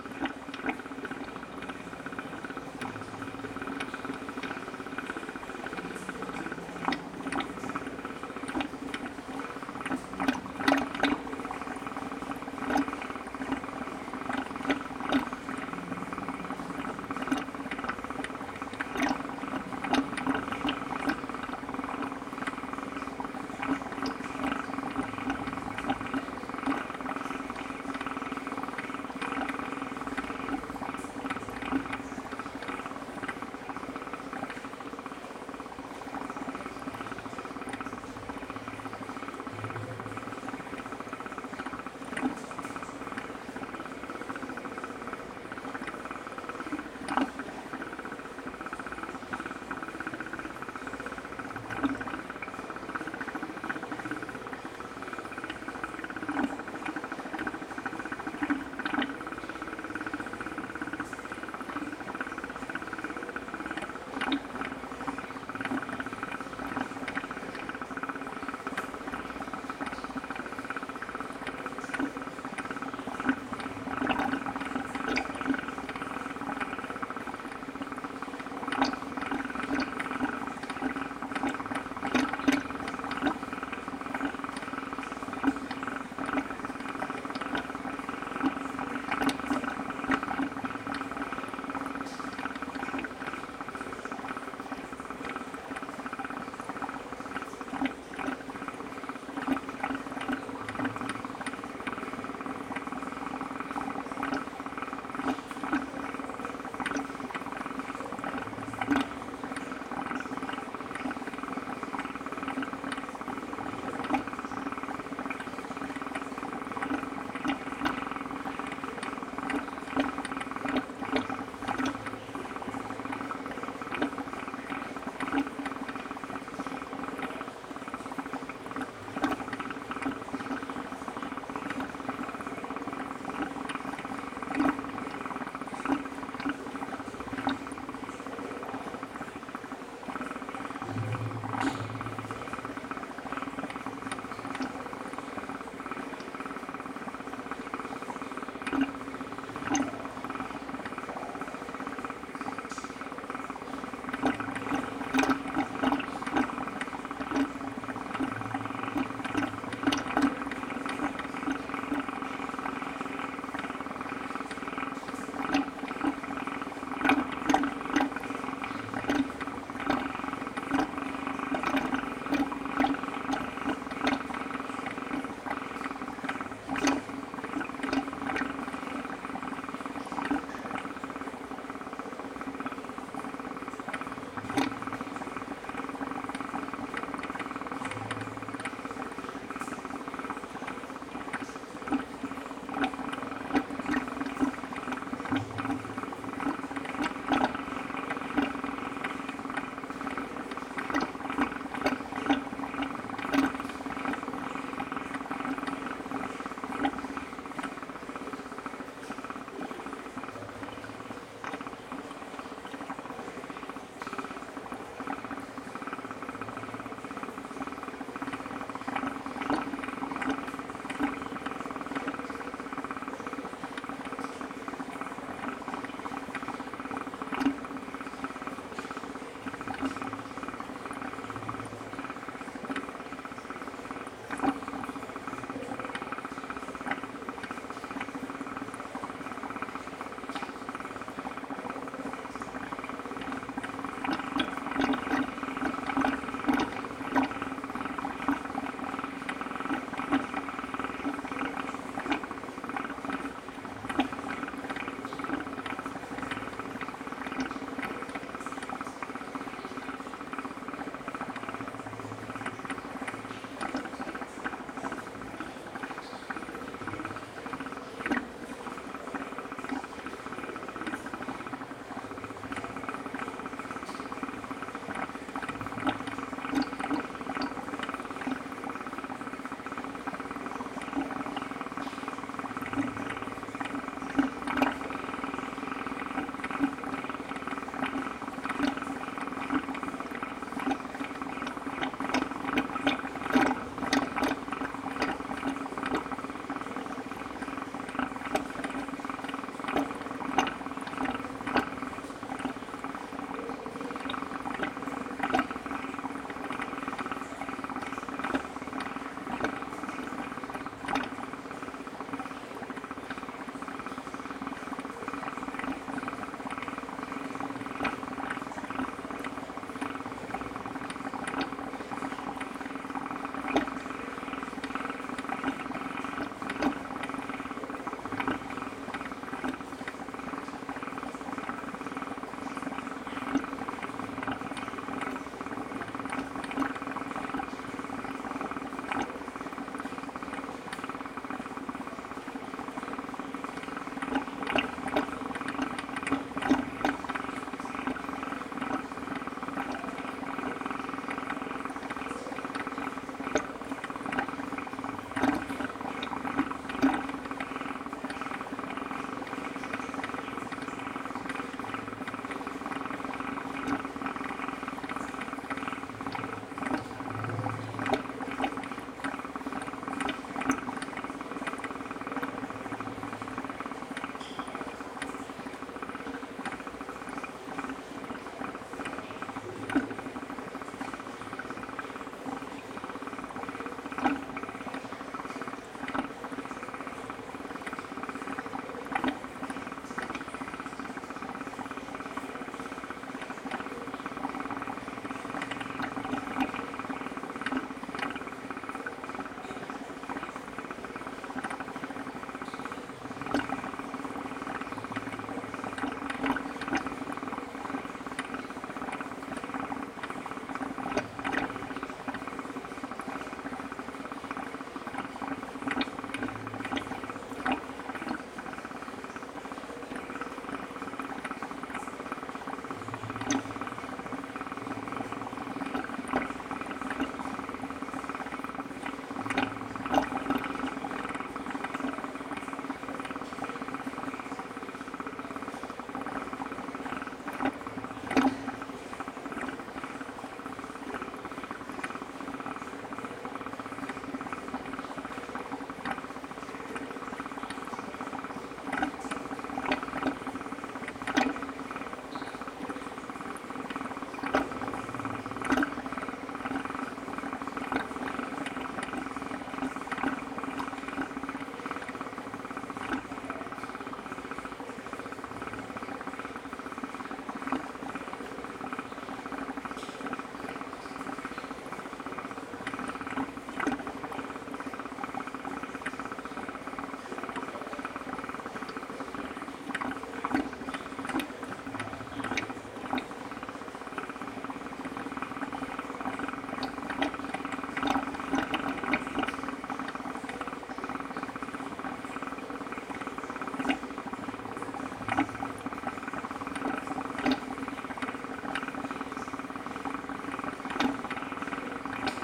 Volmerange-les-Mines, France - Bubbles into a pipe
Into an underground mine and into a pump room, this is the song of a pipe. Water is entering in and makes a small song.
Because of the summer hard drought, absolutely all my other singing pipes are dumb. I never saw that since 20 years.
12 January